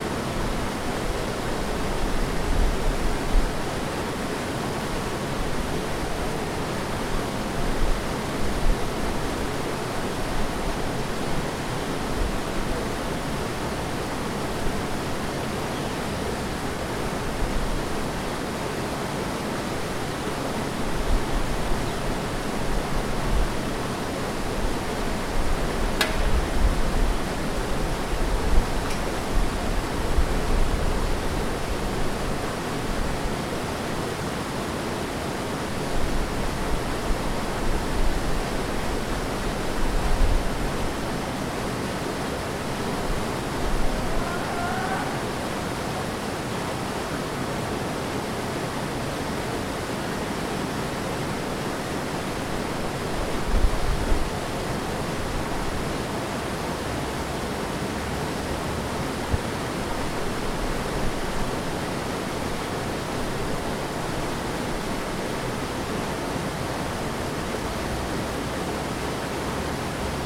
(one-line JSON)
{"title": "Sikorskiego, Gorzów Wielkopolski, Polska - Former Venice Cefe.", "date": "2020-04-23 15:31:00", "description": "Old water dam near the former Venice cafe.", "latitude": "52.73", "longitude": "15.23", "altitude": "26", "timezone": "Europe/Warsaw"}